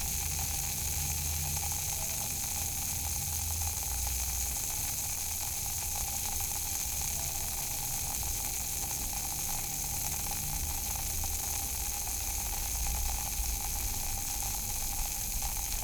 Poznan, Jezyce district, at the office - ginseng tab
everyday office routine - dissolving a ginseng tab in a glass of water.
Poznań, Poland